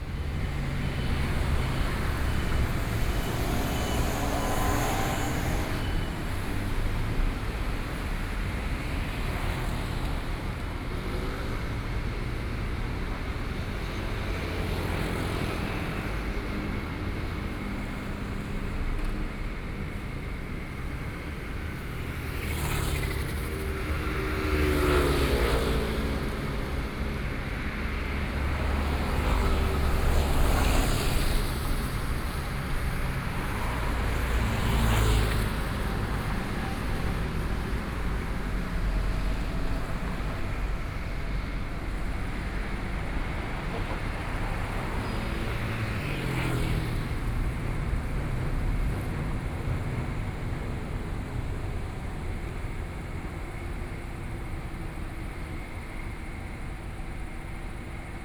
Wende Rd., Taipei City - Traffic Sound
Traffic Sound, Walking into the convenience store, Checkout